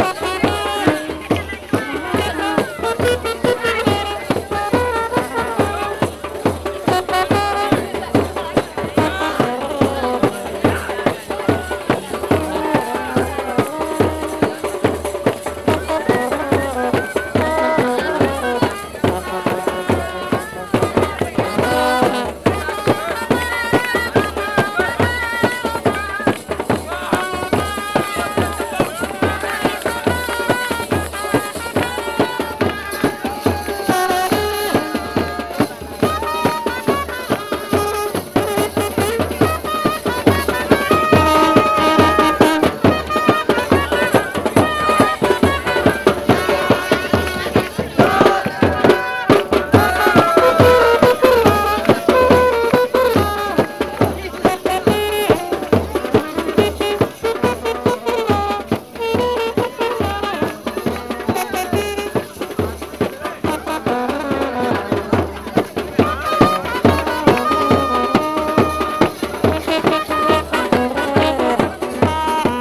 Shivala, Varanasi, Uttar Pradesh, Indien - wedding party
met a wedding procession while walking along the ghats at the ganges (recorded with early OKM binaural and a sony dat recorder)